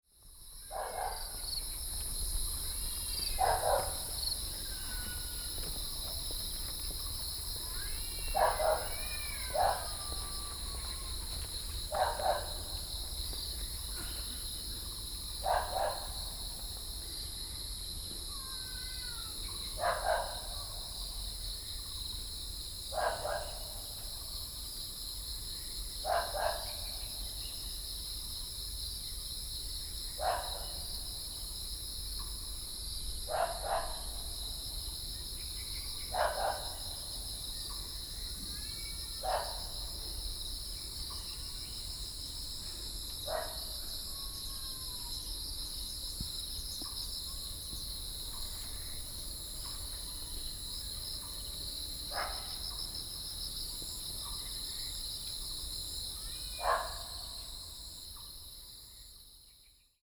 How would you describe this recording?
Cicadas, Dogs barking, Frogs, The sound of the Birds, Miaow, Sony PCM D50 + Soundman OKM II